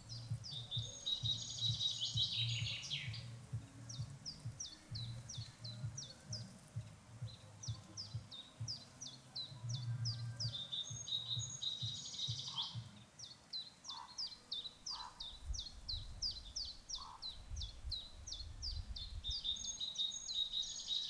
Aukštaitija National Park, Lithuania, tourists and wilderness
so-called "tourists" on the other shore of the lake playing loud music...